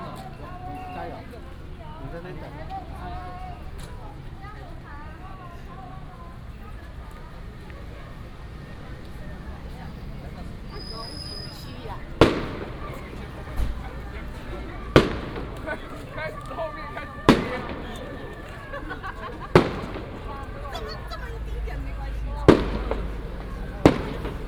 Sec., Shatian Rd., Longjing Dist. - Walking on the road
Firecrackers and fireworks, Traffic sound, Baishatun Matsu Pilgrimage Procession